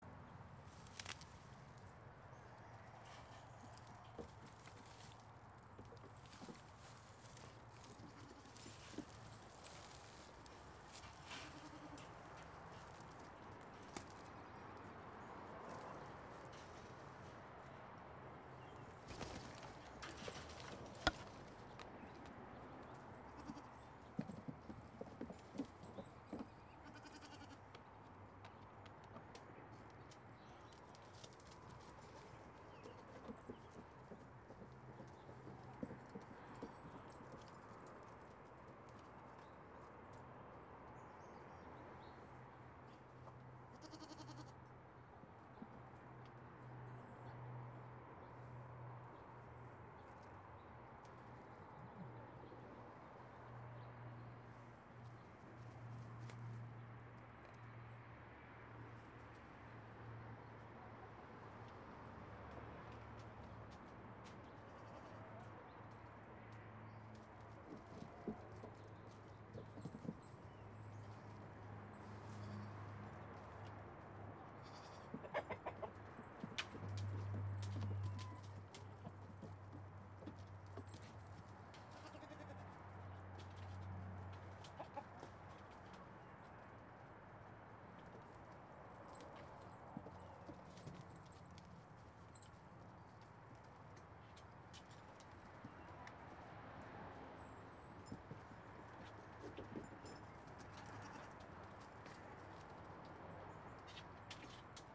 Mountain blvd. Oakland - Daisy and Roy and the chickens - Mountain blvd. Oakland - Daisy and Roy and the chickens
goats Daisy and Roy fighting for their food, chickens also fighting for theirs